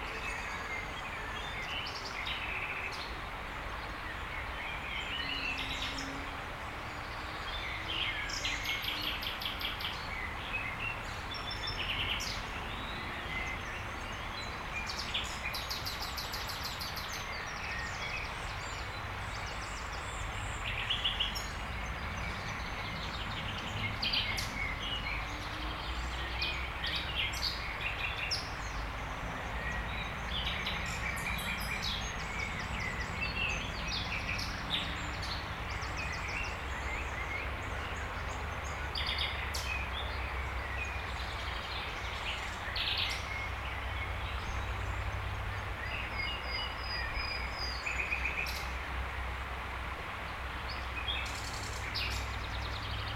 Rte de l'École du Tremblay, La Motte-Servolex, France - Près de la Leysse
Entre la Leysse et l'Avenue Verte. Un rossignol chante sous un grand platane.
Auvergne-Rhône-Alpes, France métropolitaine, France